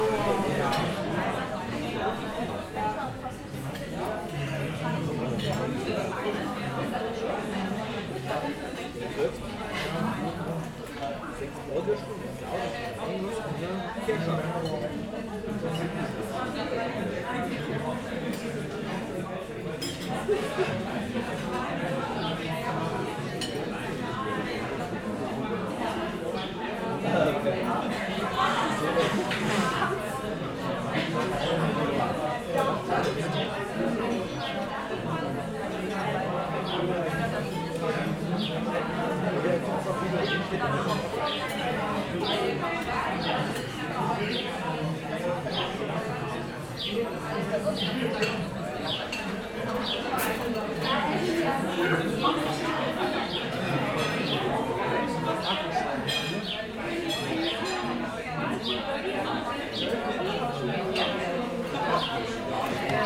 Hinter der Grieb, Regensburg, Deutschland - Biergarten Grevenreuther
Aussenaufnahme im Biergarten der Gasstätte Grevenreuther.